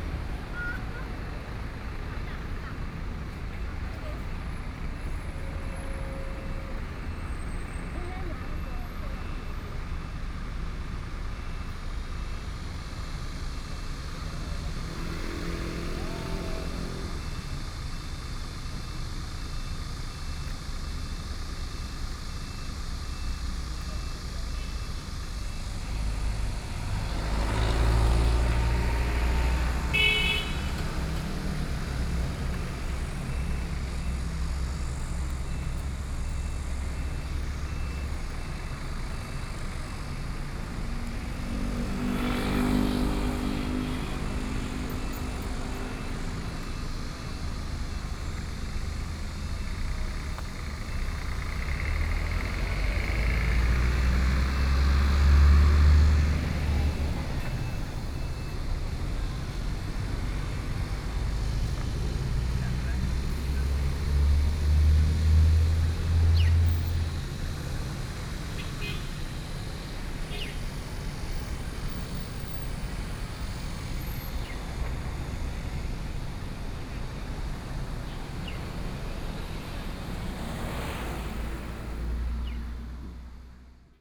Sec., Yixing Rd., Yilan City - In the Square
In the Square, Cicadas, Traffic Sound, Hot weather
Sony PCM D50+ Soundman OKM II